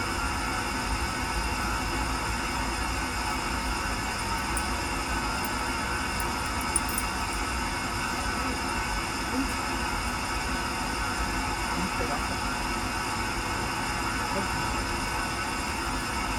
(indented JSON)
{
  "title": "Rhinstraße, Berlin, Germany - Hissing pipes amongst trees, normal & contact mic mix",
  "date": "2020-12-18 15:30:00",
  "description": "Groups of large green heating pipes snake around this area, overhead, at path level and emerging or disappearing underground. An intriguing network. Sometimes they hiss very smoothly. This was a smaller silver pipe recorded normal and with a contact mic simultaneously.",
  "latitude": "52.52",
  "longitude": "13.52",
  "altitude": "51",
  "timezone": "Europe/Berlin"
}